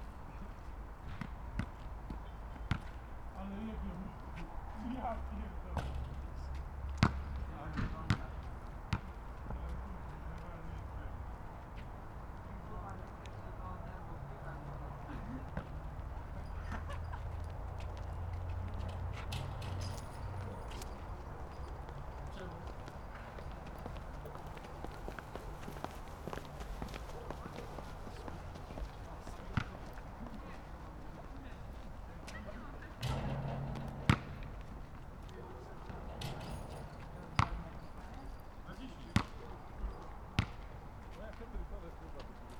Poznan, Sobieskiego housing estate - late evening horse game
a bunch of teenagers playing horse at the nearby basketball court. talking about the score and cursing heavily. the sound of basketball bouncing off the tarmac reverberates off the huge apartment buildings and around the estate.